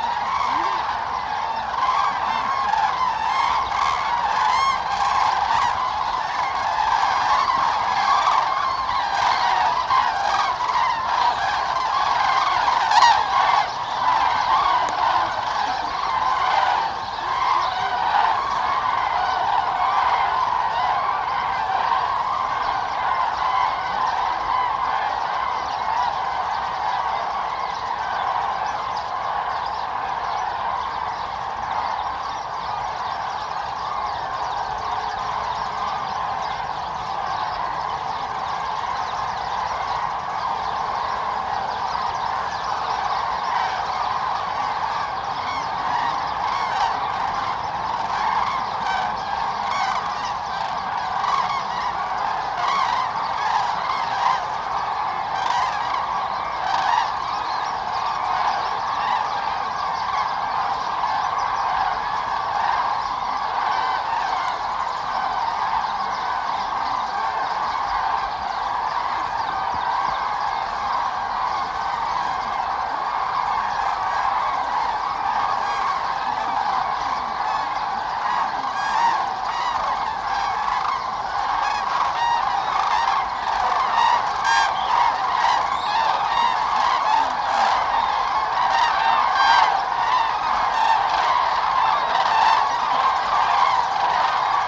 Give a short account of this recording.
During their autumn migration northern Europe's cranes gather in tens of thousands at Linum to feed and rest before continuing their journey southwards to Spain. During daylight hours the birds disperse to the surrounding farmlands, but just before dusk, with meticulous punctuality, they return in great numbers to a small area of fields and pools close to the village to roost. It is an amazing sight accompanied by wonderful, evocative sound. Wave after wave of birds in flocks 20 to 80 strong pass overhead in ever evolving V-formations trumpeting as they fly. Equally punctually, crowds of human birdwatchers congregate to see them. Most enjoy the spectacle in silence, but there are always a few murmuring on phones or chatting throughout. Tegel airport is near by and the Berlin/Hamburg motorway just a kilometer away. Heavy trucks drone along the skyline. Tall poplar trees line the paths and yellowing leaves rustle and hiss in the wind. Cows bellow across the landscape.